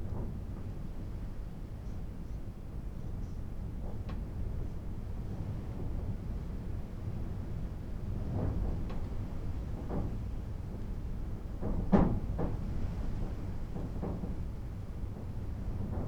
Inside Caleta Maria hut, storm outside at night, wind W 60km/h
Founded in 1942, Caleta Maria sawmill was the last of the great lumber stablishments placed in the shore of the Almirantazgo sound.